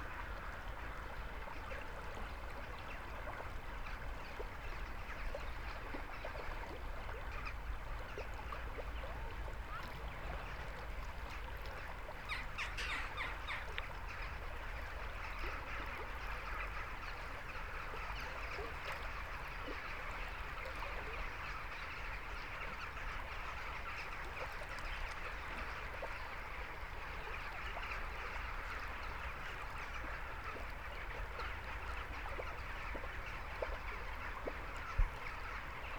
Utena, Lithuania, evening in the park - evening in the park